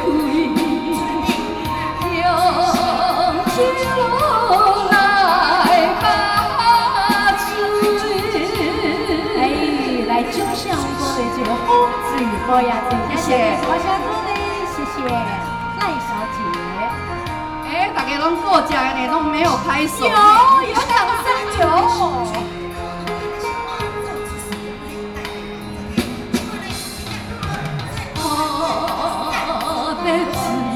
豐年公園, Beitou, Taipei City - Community party
Community party, Sony PCM D50 + Soundman OKM II